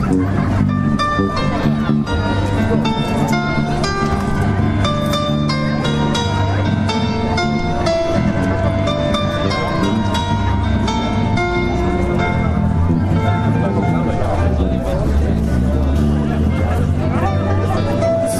devinska nova ves, vinobranie
atmosphere at the wine harvesting feast in devinska nova ves